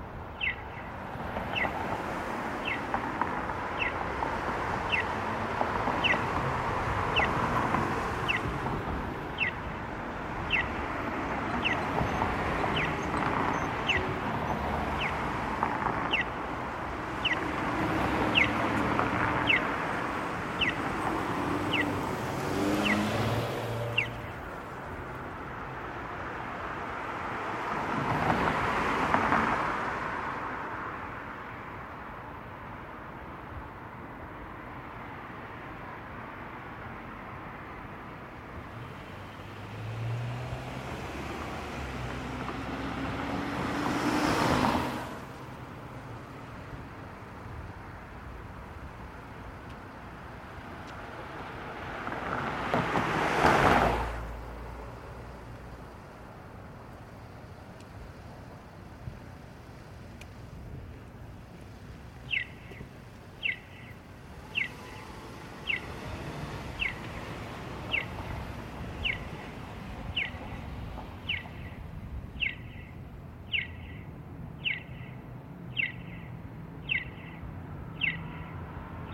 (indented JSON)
{
  "title": "University, Windsor, ON, 加拿大 - intersection",
  "date": "2016-02-21 14:00:00",
  "description": "recorded beside harverys burger joint sound includes car running and people riding bicycles",
  "latitude": "42.31",
  "longitude": "-83.06",
  "altitude": "185",
  "timezone": "America/Toronto"
}